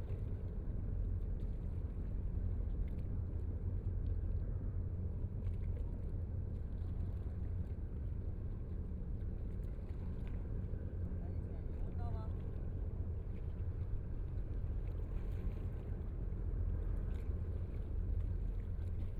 Huangpu River, Shanghai - Ship
Standing beside the river, And from the sound of the river boat, Binaural recording, Zoom H6+ Soundman OKM II
Shanghai, China, 29 November, ~14:00